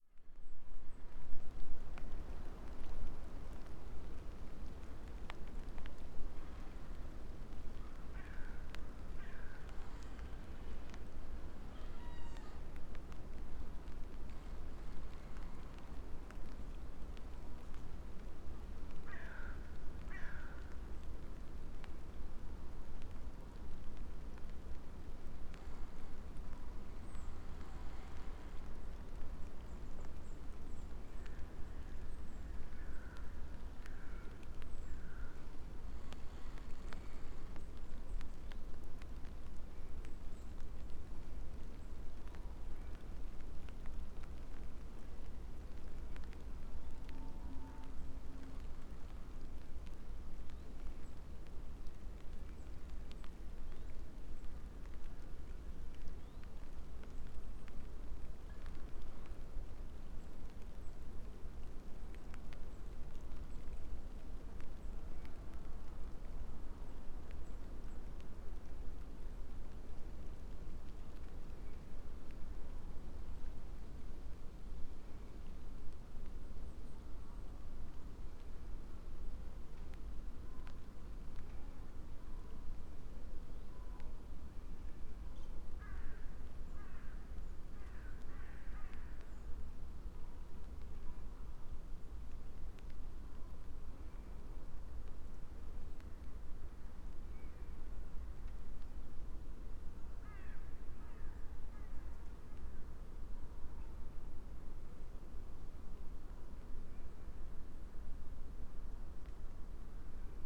quiet winter forest ambience, crows